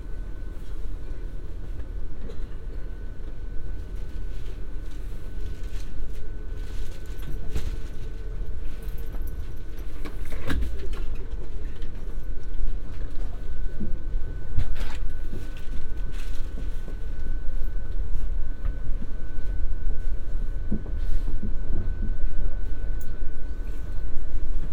Branksome Hill Rd, Poole, Bournemouth, UK - Quiet Coach Meditation, Branksome to Poole
A ten minute meditation sitting on the Quiet Coach of a train from London Waterloo to Weymouth. (Binaural PM-01s with Tascam DR-05)